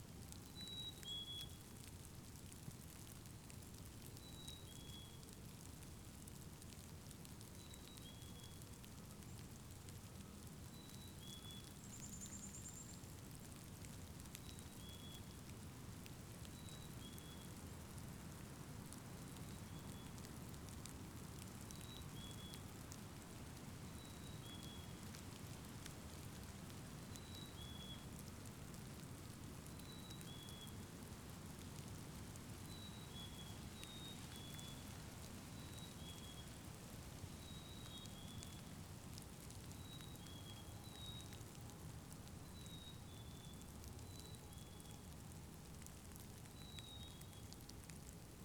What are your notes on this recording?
Recorded on a snowy March day in the parking lot of the boat launch at Willow River State Park. Wet snow flakes can be heard falling on the ground, Recorded using Zoom h5